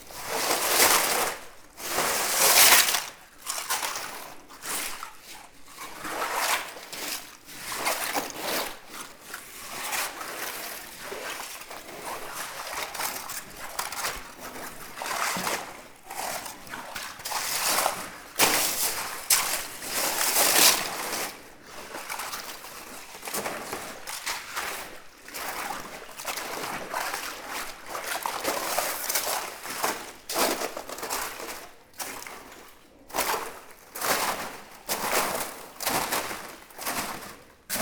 Neufchef, France - Walking in the underground mine
Walking into the underground mine. There's a very strong lack of oxygen in this interesting place. It's difficult for me. At the end of the recording, I'm walking in a ultra-thick layer of calcite.